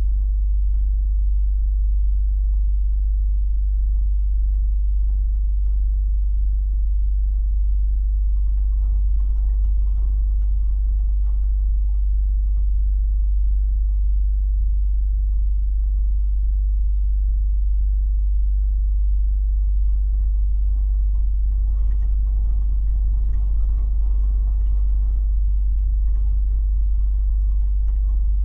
Utena, Lithuania, at electric substation
the place I always liked to capture. and finally it's here. good circumstances: very windy day blocks unwanted city's sounds. this is two part recording. the first part: I stand amongst the trees with conventional mics. the second part: contact mics and geophone is placed on metallic fence surrounding the electric substation. low frequencies throbbing everything...